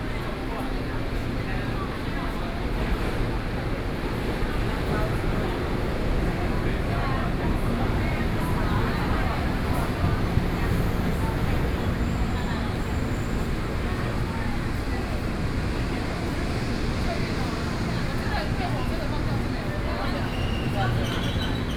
Wanhua District - Taiwan Railway
from Taipei station to Wanhua Station, Sony PCM D50 + Soundman OKM II
Zhongzheng District, 小南門, August 2013